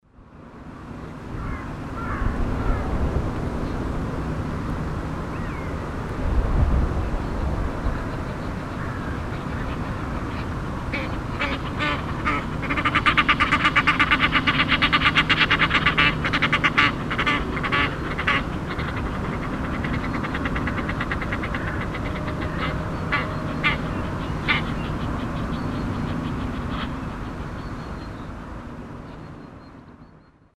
monheim, rheinwiesen, gänse
ansammlung von gänsen an einem frühlingsmorgen am hochwasser tragenden, windigen rheinufer
soundmap nrw:
social ambiences, topographic field recordings